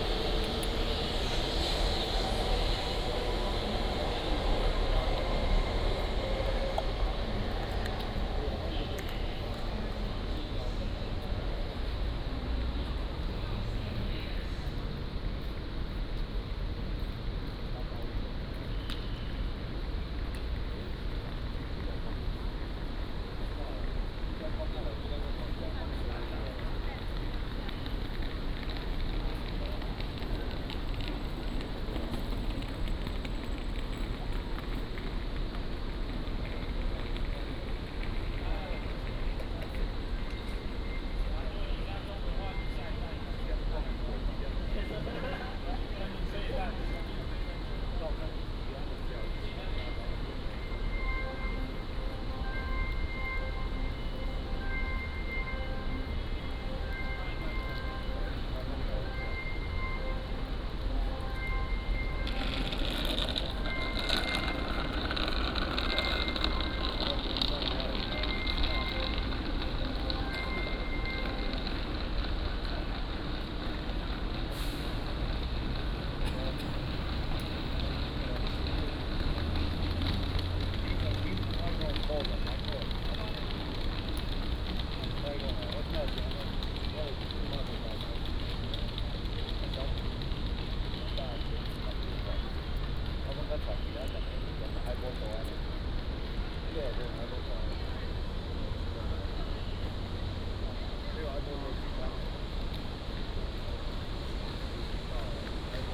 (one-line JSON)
{"title": "台灣高鐵台中站, Taiwan - In the square outside the station", "date": "2015-04-30 18:57:00", "description": "In the square outside the station", "latitude": "24.11", "longitude": "120.62", "altitude": "31", "timezone": "Asia/Taipei"}